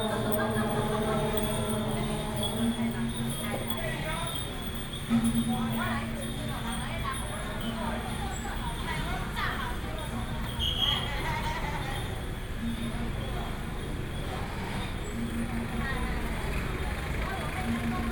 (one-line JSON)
{"title": "Huaxi St., 萬華區, Taipei City - Traditional temple festivals", "date": "2012-12-04 16:20:00", "latitude": "25.04", "longitude": "121.50", "altitude": "13", "timezone": "Asia/Taipei"}